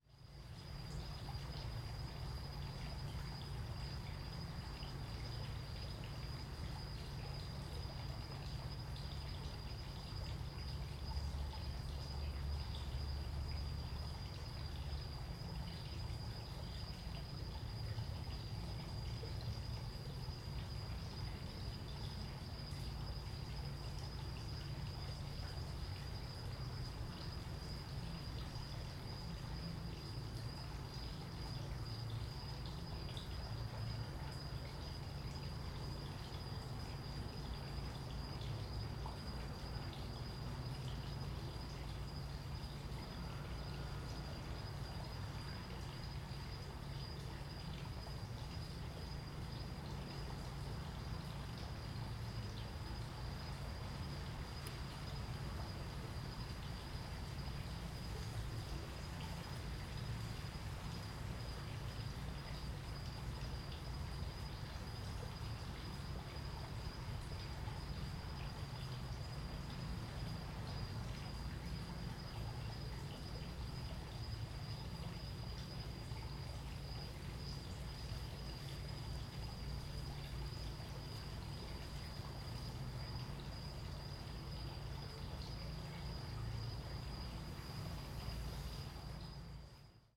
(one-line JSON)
{"title": "Beach Castle Resort, Longboat Key, Florida, USA - Beach Castle Resort Fountain", "date": "2021-03-22 18:46:00", "description": "Evening recording near Beach Castle Resort fountain", "latitude": "27.41", "longitude": "-82.66", "altitude": "8", "timezone": "America/New_York"}